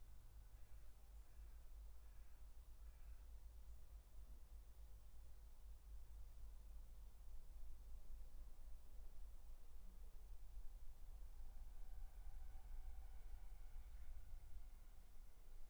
Dorridge, West Midlands, UK - Garden 12
3 minute recording of my back garden recorded on a Yamaha Pocketrak